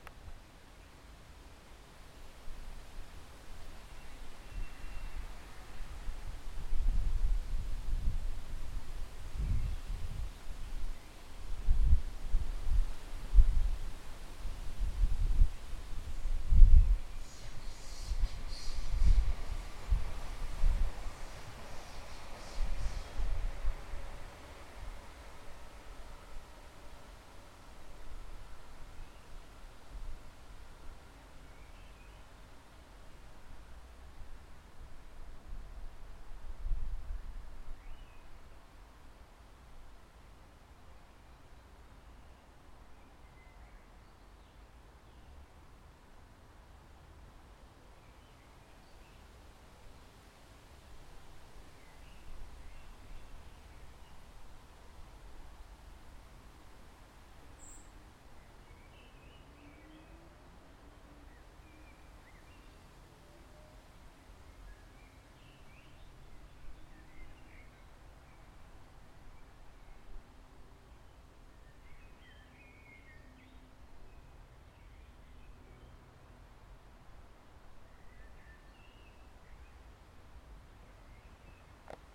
Willy-Brandt-Ring, Worms, Deutschland - Jüdischer Friedhof Heiliger Sand, Worms
Größter jüd. Freidhof in Europa. Zuggeräusche, Wind, Vogelstimmen.